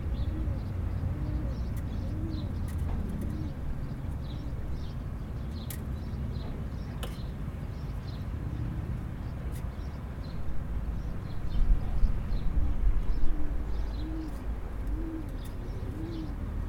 Au milieu du vieux pont de Seyssel pour une pêche magnétique avec deux cubes néodyme au bout de fil inox 0,2, but récupération d'une pièce en caoutchouc située quelques mètres en contre-bas, pour Stéphane Marin, c'est un exemple de sérendipité, la cloche de l'église de la Haute-Savoie sonne midi, le son se répercute sur les façades de l'Ain de l'autre côté du Rhône, le son réfléchi est plus fort que le son source, c'est dû à la position du ZoomH4npro, passage d'un groupe de motards et vers la fin on peut entendre la rencontre des deux aimants qui viennent pincer la pièce à récupérer.
France métropolitaine, France